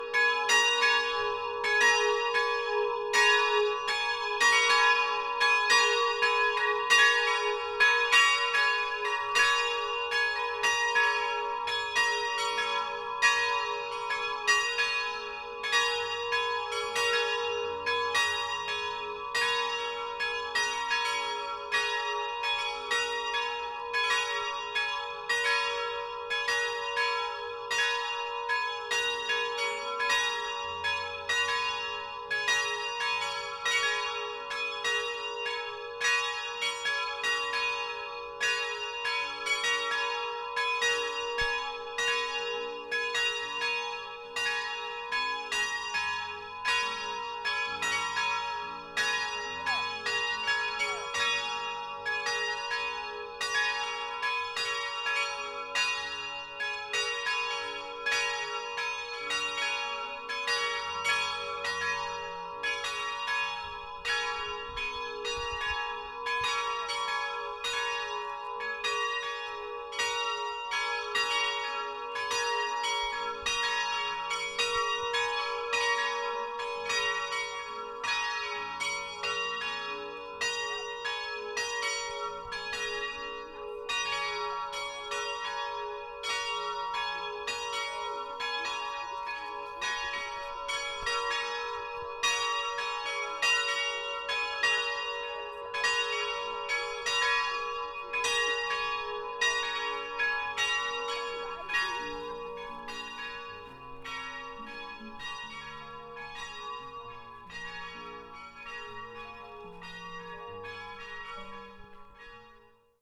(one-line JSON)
{"title": "Gießen, Deutschland - Glocken der Lichtkirche", "date": "2014-06-13 12:13:00", "description": "Three people with sound proof head phones (needed!) ring the bells of the temporarily installed \"Lichtkirche\" at the Landesgartenschau. Systematic tuning (of the bells) meets chaotic coordination. Recorded with ZoomH4N", "latitude": "50.59", "longitude": "8.69", "altitude": "161", "timezone": "Europe/Berlin"}